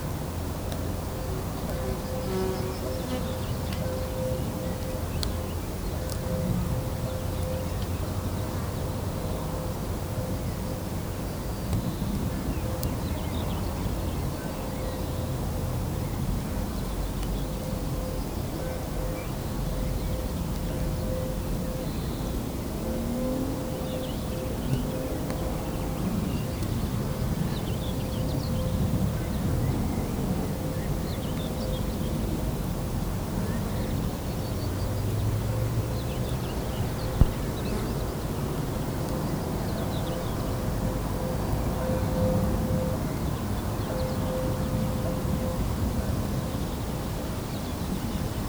der weg zum garten. gotha, thüringen.
Gotha, Deutschland, 15 June 2011